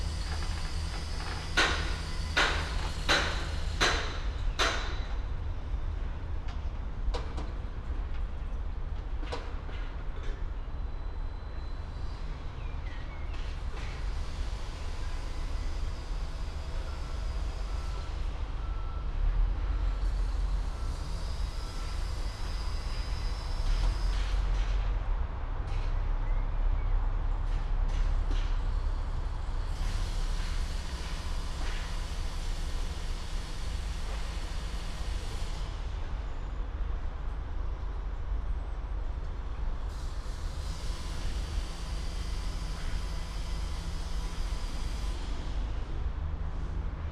Elgar Rd S, Reading, UK - Construction sounds of 112 new apartments across the river from my house
Across the river from me they are building 112 apartments. Sony M10 with Primo boundary array.